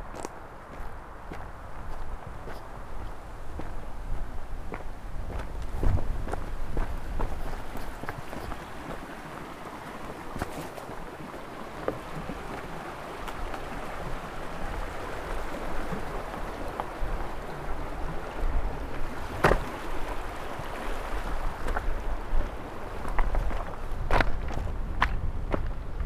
a very quick visit with the pecos river.
zoomh4npro